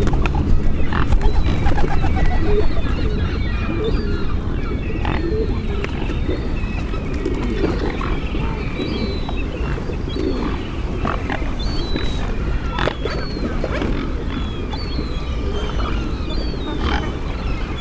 Swans and Bells - Swan Sanctuary, Riverside, Worcester UK
A huge gathering of swans on the River Severn near the bridge in Worcester. Strangely the cathedral bourdon bell tolls more than 20 times. The swans are very close inspecting me and my equipment. A motor boat passes. Recorded with a Sound Devices Mix Pre 3 and 2 Sennheiser MKH 8020s.